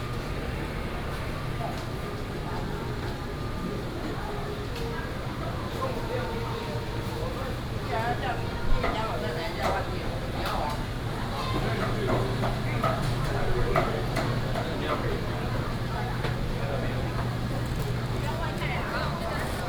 忠孝黃昏市場, Zhongli Dist. - walking through the traditional market
Traditional market, traffic sound
Taoyuan City, Taiwan